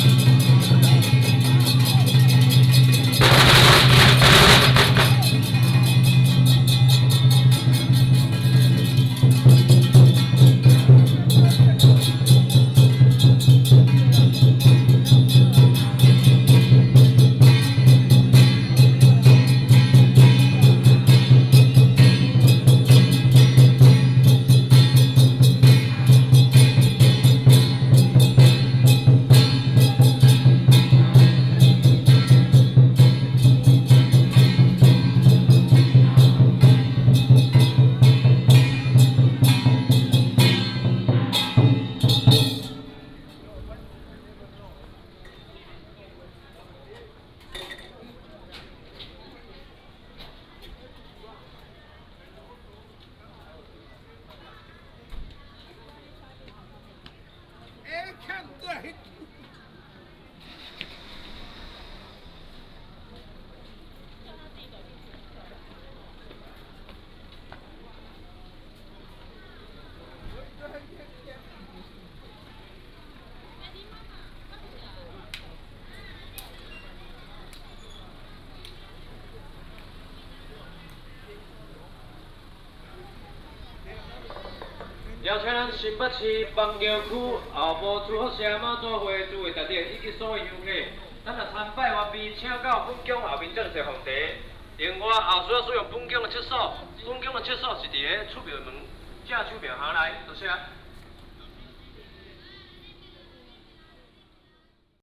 鹿港天后宮, Lukang Township, Changhua County - Pilgrimage group
Pilgrimage group, In the temple